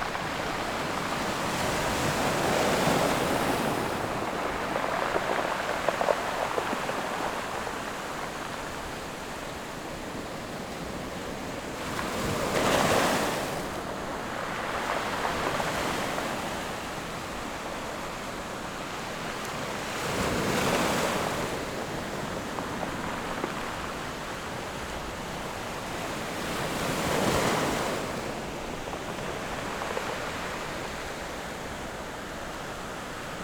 {"title": "興昌村, Donghe Township - Sound of the waves", "date": "2014-09-06 11:30:00", "description": "Sound of the waves, Very hot weather\nZoom H6 MS+ Rode NT4", "latitude": "22.89", "longitude": "121.25", "altitude": "9", "timezone": "Asia/Taipei"}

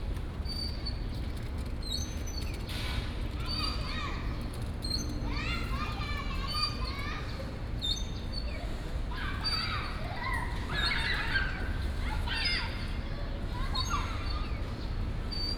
{"title": "德安公園, Da'an District, Taipei City - Child In the park", "date": "2015-06-25 16:46:00", "description": "Child, Swing, Chirp, In the park, Hot weather", "latitude": "25.04", "longitude": "121.55", "altitude": "24", "timezone": "Asia/Taipei"}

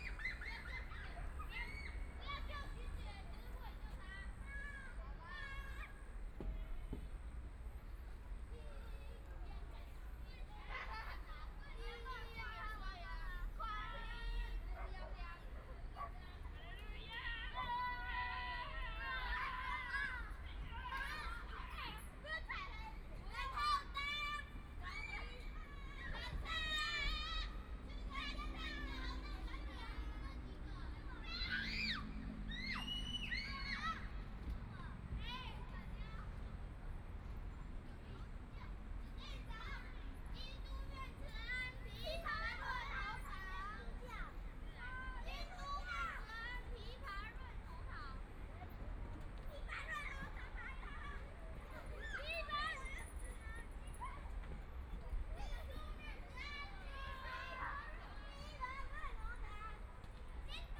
{"title": "Mingli Elementary School, Hualien City - Student", "date": "2014-02-24 12:32:00", "description": "Standing next to school, Students in the game area\nPlease turn up the volume\nBinaural recordings, Zoom H4n+ Soundman OKM II", "latitude": "23.98", "longitude": "121.61", "timezone": "Asia/Taipei"}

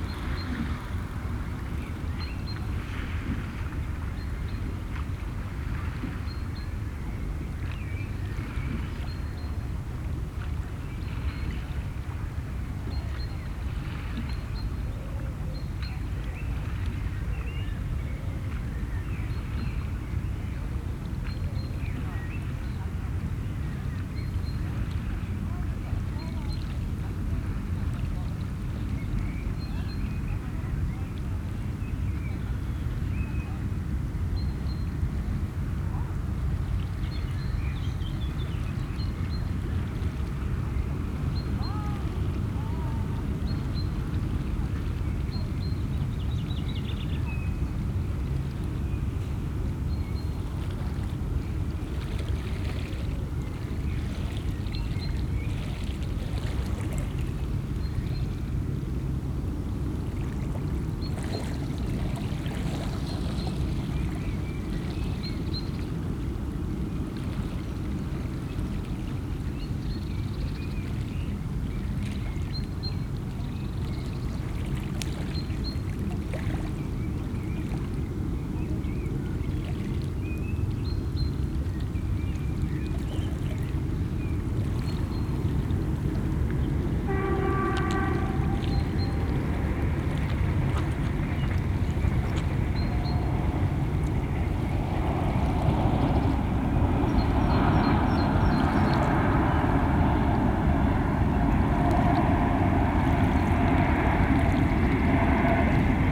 {"title": "Martin-Luther-King-Straße, Bonn, Deutschland - Freight trains at the Rhine", "date": "2010-04-29 12:00:00", "description": "Every few minutes, the on and off swelling sound of freight trains or large cargo ships breaks through the riverside atmosphere on the Rhine and occupies the listening space.", "latitude": "50.71", "longitude": "7.16", "altitude": "51", "timezone": "Europe/Berlin"}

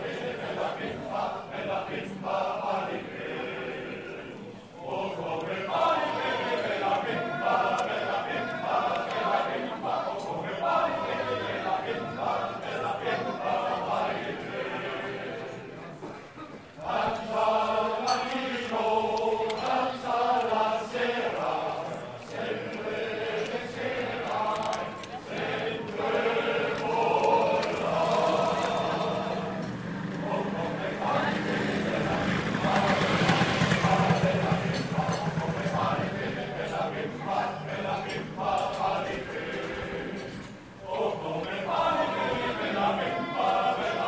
{"title": "Riet, summer festival", "date": "2011-08-21 12:45:00", "description": "Summer party near city hall in the village of Riet.", "latitude": "48.90", "longitude": "8.97", "altitude": "251", "timezone": "Europe/Berlin"}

